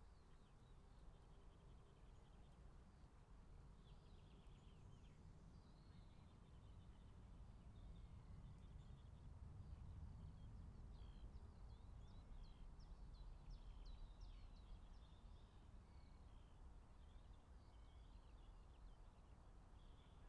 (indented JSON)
{
  "title": "Tiefenbroicher Weg, Düsseldorf, Niemcy - Plane landing",
  "date": "2019-05-01 19:36:00",
  "description": "landing a380 at dus\nzoom h6 msh6 mic",
  "latitude": "51.30",
  "longitude": "6.79",
  "altitude": "41",
  "timezone": "Europe/Berlin"
}